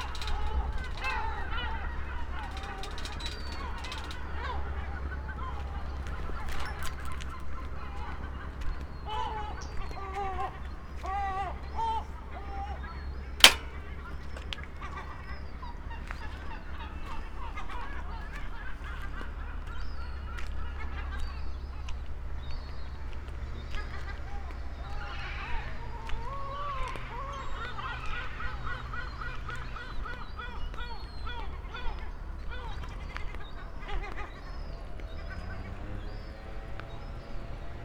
Novigrad, Croatia - and again, and so on, at dawn ...
the same circular path with bicycle, this year prolonged all the way to the fisherman boats harbour ... seagulls, waves, swimmers at dawn